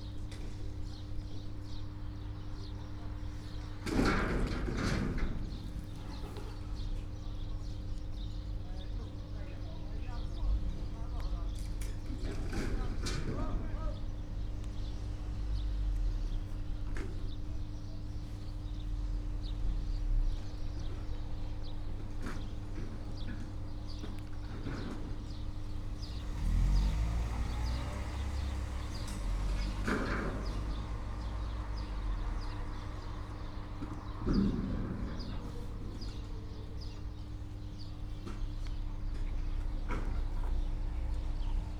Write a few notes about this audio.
migrant and local workers sorting garbage at one of the rare recycling sites. this one is located near a huge landfill in the north of Malta, a highly controversial project. Almost all waste of Malta goes here, but the disposal is not safe, according to EU assessment, poisonous fluids etc. are migrating into the ground, water and sea. (SD702, DPA4060)